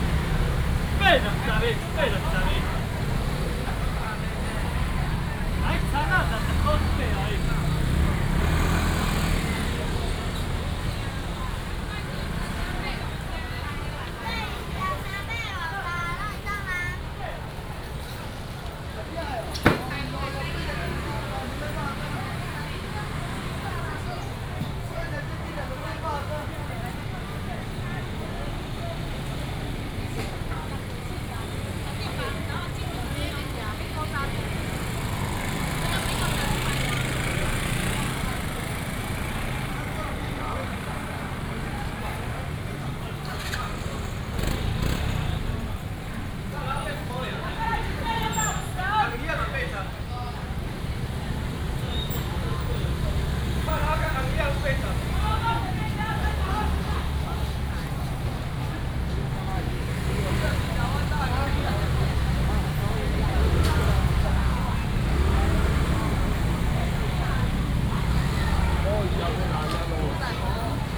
Zhongzheng St., 羅東鎮仁和里 - Walking in the traditional market
Walking in the traditional market, A lot of motorcycles, Rainy day, vendors peddling, Binaural recordings, Sony PCM D100+ Soundman OKM II